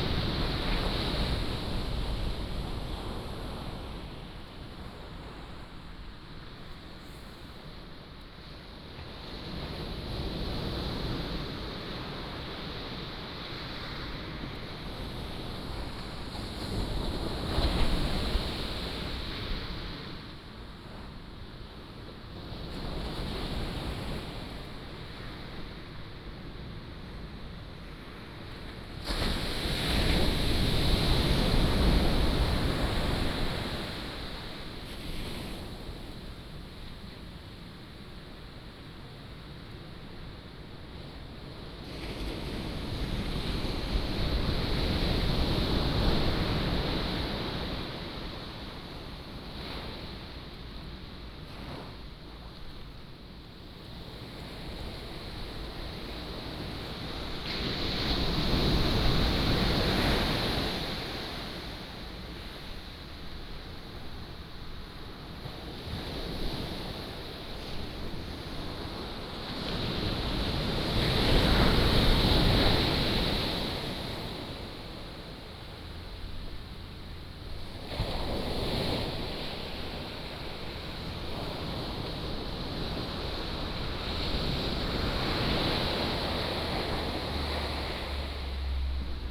午沙港, Beigan Township - Small port
Small port, Small village, Sound of the waves